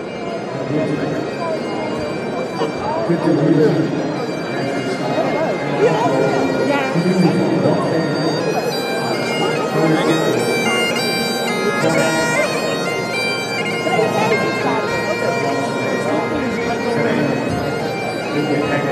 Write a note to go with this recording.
Bagpipe on International Whisky Fair Leiden 2009, Zoom H2 recorder